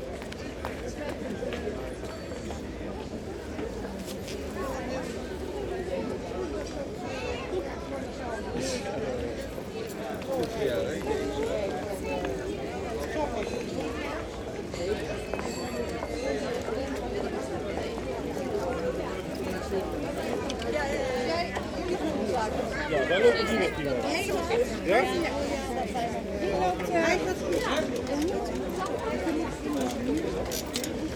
Vismarkt, Utrecht, Nederland - Vismarkt, Utrecht
- General atmosphere, pedestrians on the Vismarkt, Utrecht. Recorded Saturday September 13th 2014.
- Algemene sfeer, voetgangers op de Vismarkt, Utrecht. Opgenomen zaterdag 13 september 2014.
Zoom H2 internal mics.
Utrecht, The Netherlands, 13 September 2014